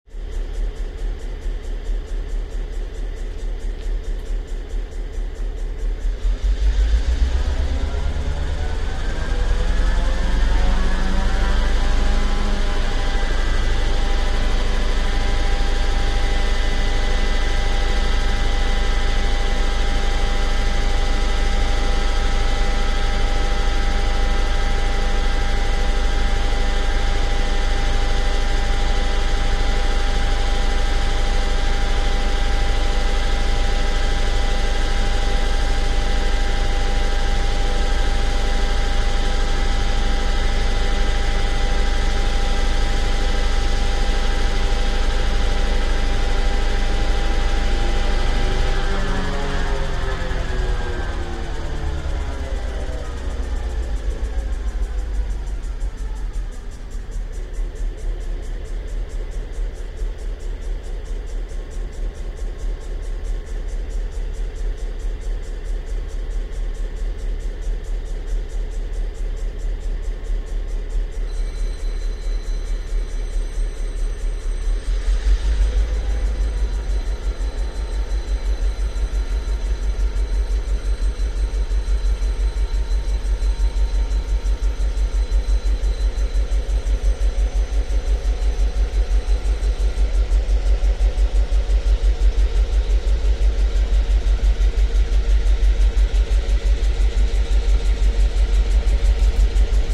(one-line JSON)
{"title": "freight train, Montzen", "date": "2007-10-07 19:50:00", "description": "Montzen goods station, freight train with 2 Belgian class 55 GM diesels, revving engines and then driving off. Zoom H2.", "latitude": "50.73", "longitude": "5.93", "altitude": "207", "timezone": "Europe/Berlin"}